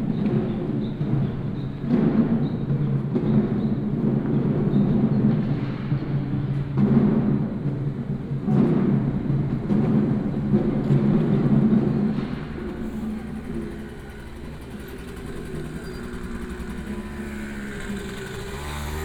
Ln., Wanda Rd., Wanhua Dist., Taipei City - Walk outside the school
Walk outside the school, alley, traffic sound